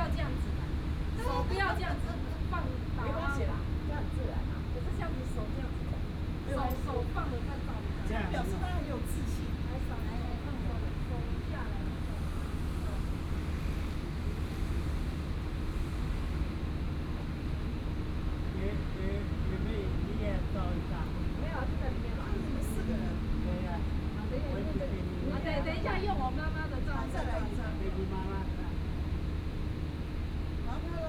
虎嘯公園, Da’an Dist., Taipei City - in the Park
in the Park, Several older people taking pictures, air conditioning Sound